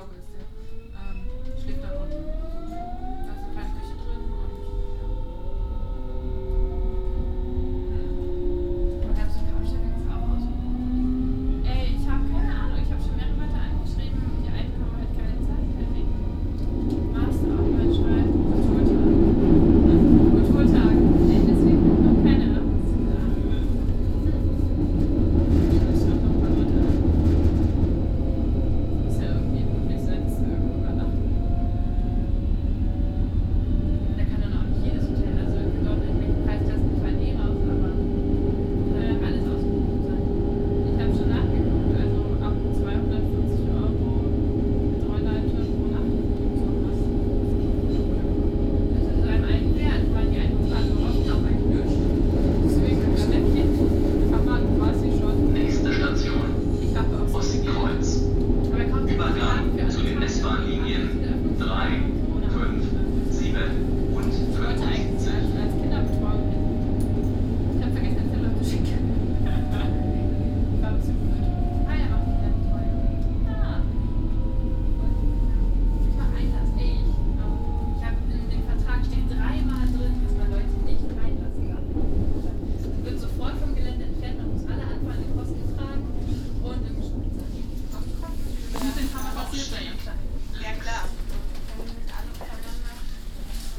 Berlin-Friedrichshain, Berlijn, Duitsland - 1 minute S-Bahn trip.
1 minute S-Bahn trip from Treptower Park to Ostkreuz. Binaural recording.
13 September 2012, 23:15